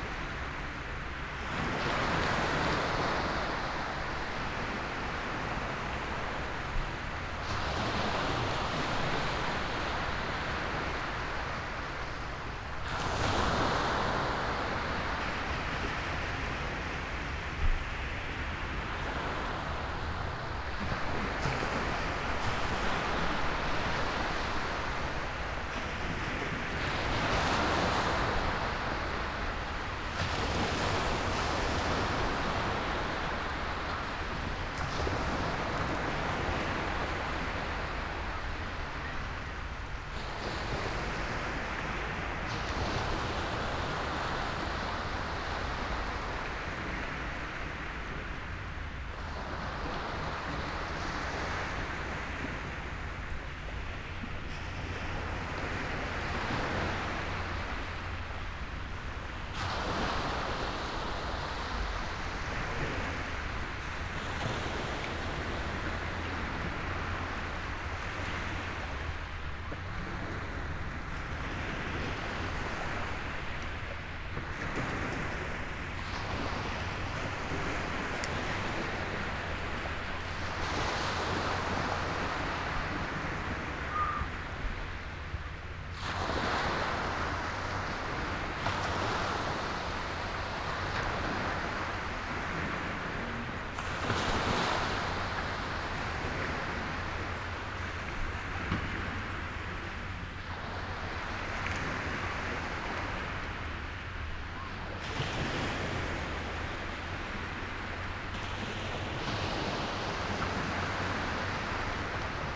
Bridlington, Park and Ride, Bridlington, UK - south prom bridlington ... falling tide ...
south prom bridlington ... falling tide ... xlr sass on tripod to zoom h5 ... long time since have been able to record th ewaves ...